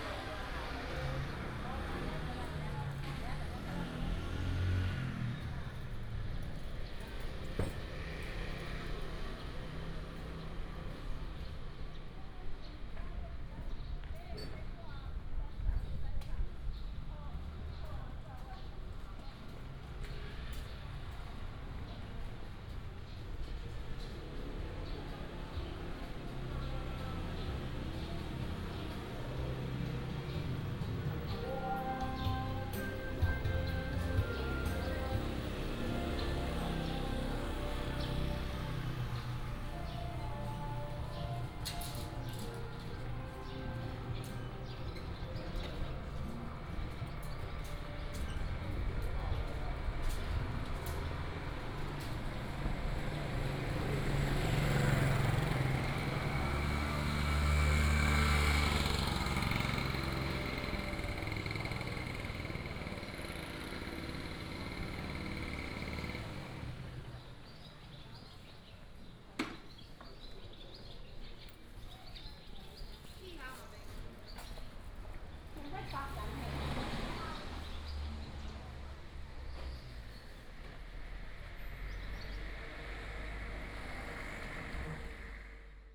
北斗紅磚市場, Beidou Township - Walking in the old building market
Walking in the old building market, Traffic sound, sound of the birds
6 April 2017